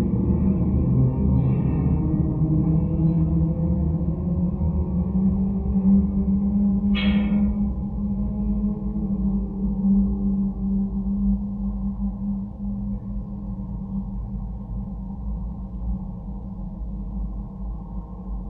Rytmečio g., Karkiškės, Lithuania - Water tower support cable
Dual contact microphone recording of a long water tower support cable. Wind, ambience and occasional traffic sounds are droning and reverberating along the cable.